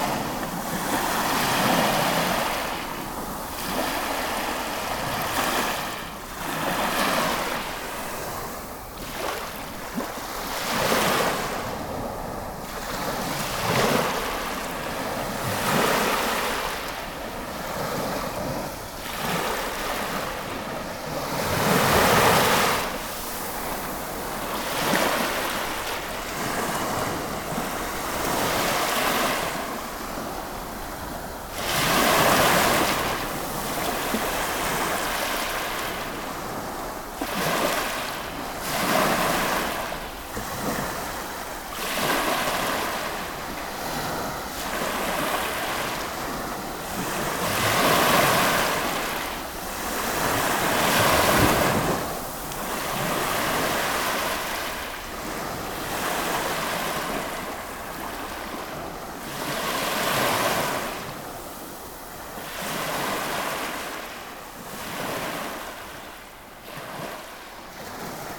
stodby, waves of the east sea
constant waves on the stoney berach shore at stodby, lolland, denmark - a mild wind breeze on a fresh summer morning
international sound scapes - social ambiences and topographic field recordings